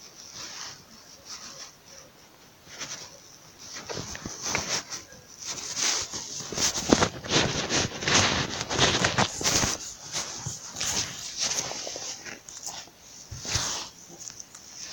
Via Fontesecco, LAquila AQ, Italia - a little walk during 2020 lockdown-04-01-2020
The bridge where i made this recording is still closed after the earthquake of 2009 in L'Aquila. Many people where doing little walks around there during the lockdown, but that day i was totally alone, so baiscally what you hear are my footsteps, some wind and a couple of cars moving in the streets under the bridge
Abruzzo, Italia, 1 April 2020